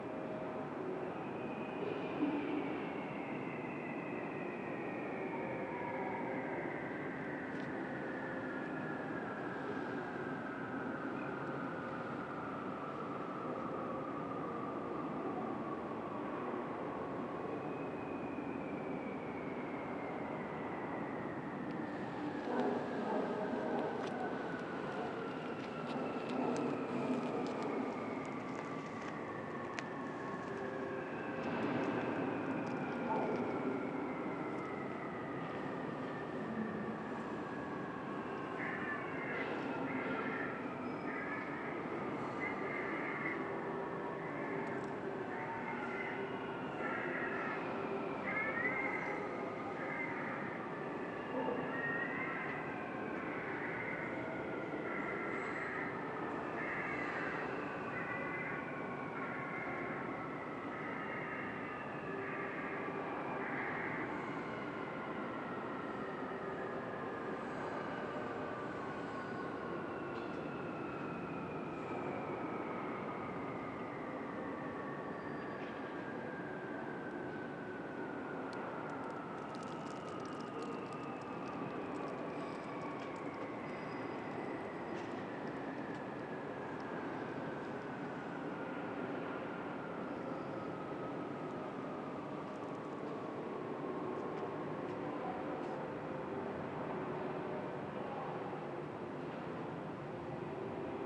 El Barri Gòtic, Barcelona, Spain - sirens
strange sirens. Telinga stereo mic